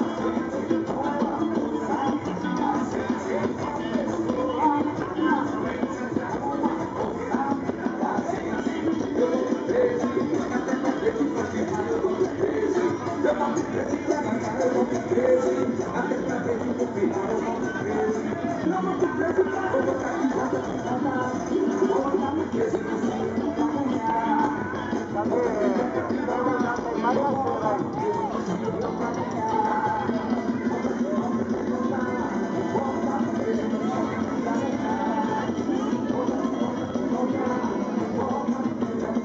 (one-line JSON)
{"title": "Tambaú Beach, João Pessoa - Paraíba, Brésil - Spring, Sunday, early night.", "date": "2012-10-28 20:39:00", "description": "Típica comemoração popular na principal praia urbana de João Pessoa, após anunciado o vencedor das eleições para prefeito. Gravado andando com meu Lumix FZ 38. [A tipical popular commemoration to celebrate the new city's Mayor. Recorded walking with a Lumix FZ 38.]", "latitude": "-7.12", "longitude": "-34.82", "altitude": "8", "timezone": "America/Fortaleza"}